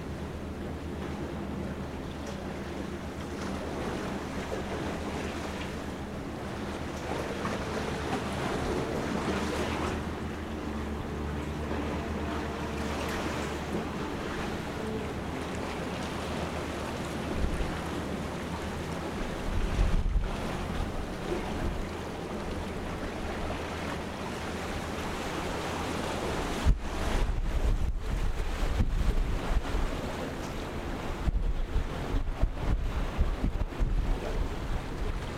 Noordelijk havenhoofd, Den Haag, Niederlande - Scheveningen waves